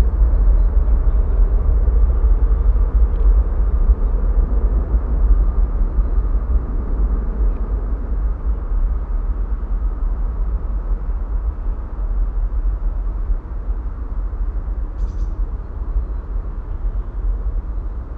ratingen/ düsseldorf, wald nahe flughafen, an bahn
flugzeugstart resonanzen im wald hinter flugfeld, nah bahnlinie, nachmittags
soundmap nrw:
social ambiences/ listen to the people - in & outdoor nearfield recordings